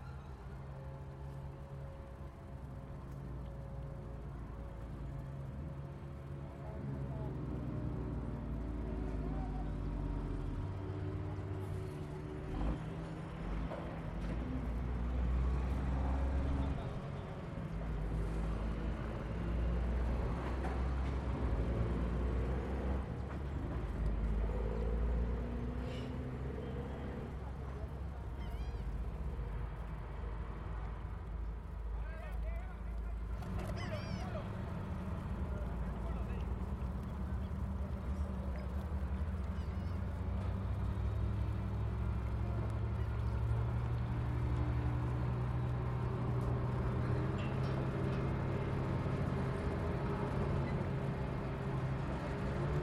{"title": "Puerto López, Meta, Colombia - Rio metica", "date": "2016-01-08 16:30:00", "description": "A cargo ship is being parked on the riverside of Metica's river.\nFor a better audio resolution and other audios around this region take a look in here:\nJosé Manuel Páez M.", "latitude": "4.10", "longitude": "-72.94", "altitude": "174", "timezone": "GMT+1"}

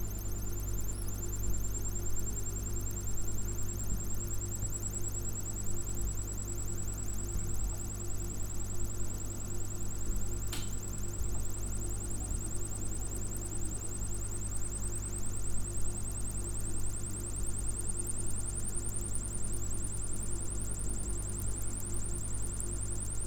{"title": "Poznan, Mateckiego street, kitchen - space egg", "date": "2012-12-22 08:24:00", "description": "boiling another egg. this time it's an egg from space i guess. fridge making its point in the backgroud.", "latitude": "52.46", "longitude": "16.90", "altitude": "97", "timezone": "Europe/Warsaw"}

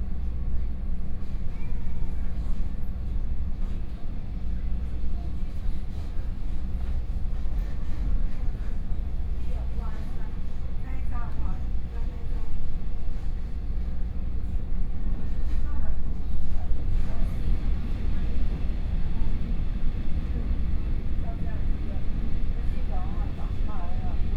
{"title": "Houli District, Taichung City - Local Train", "date": "2013-10-08 10:40:00", "description": "from Tai'an Station to Fengyuan Station, Zoom H4n+ Soundman OKM II", "latitude": "24.29", "longitude": "120.73", "altitude": "244", "timezone": "Asia/Taipei"}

